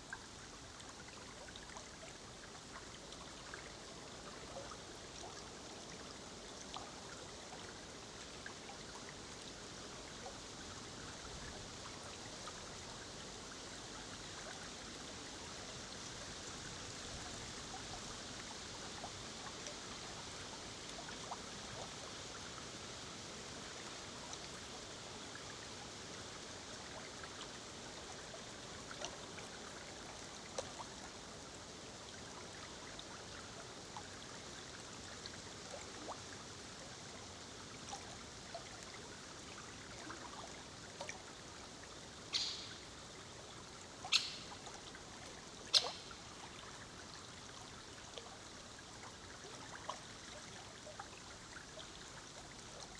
Vyzuonos, Lithuania, at river Sventoji
spent hapf a day at river...
Utenos apskritis, Lietuva, 25 October, 14:45